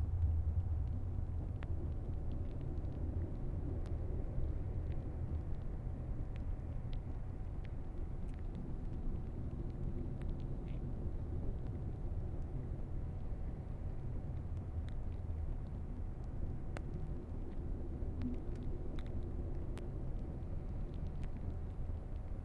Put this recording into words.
On the coast, Stone area, Place the contact microphone in the stone crevice, Zoom H6+ contact mic